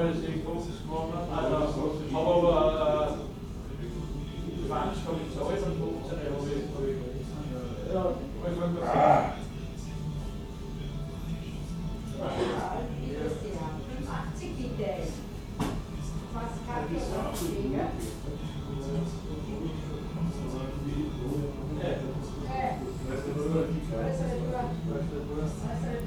graz iv. - gasthaus k. gartner
gasthaus k. gartner
26 November 2009, ~20:00